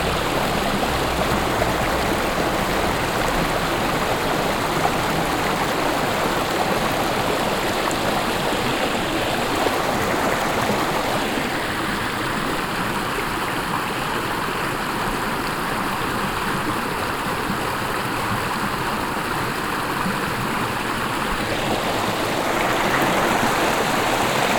stolzembourg, camping place, our
On a camping place at the river our.
The water flows over a low, long stone line that has been build by children here and functions like a small dam.
Stolzembourg, Camping Platz, Our
Auf einem Campingplatz am Fluss Our. Das Wasser fließt über eine flache lange Steinmauer, die von Kindern hier gebaut wurde und wie ein kleiner Damm funktioniert.
Stolzembourg, terrain de camping, Our
Sur un terrain de camping près de la rivière Our.
L’eau coule par-dessus une longue rangée de pierres que des enfants ont posées et qui fait comme un petit barrage.
Luxembourg